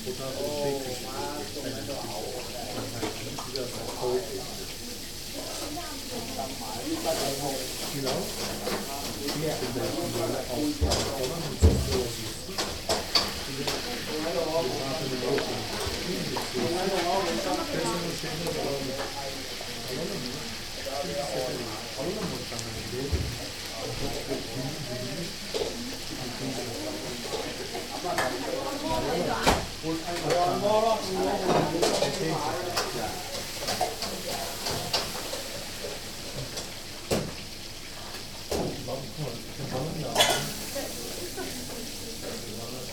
11.03.2009 21:30 alteingesessene chinesische garküche in der maastrichter str., köln / old-established chinese food store